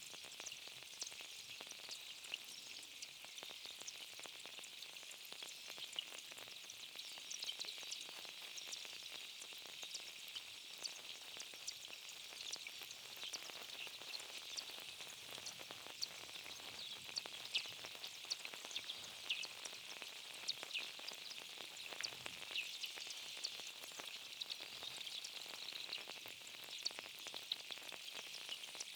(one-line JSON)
{"title": "Fjallsárlón, Iceland - Glacier Lagoon", "date": "2015-07-20 17:48:00", "description": "Ice from glacier melting in lagoon. Recorded with two hydrophones", "latitude": "64.02", "longitude": "-16.38", "altitude": "15", "timezone": "Atlantic/Reykjavik"}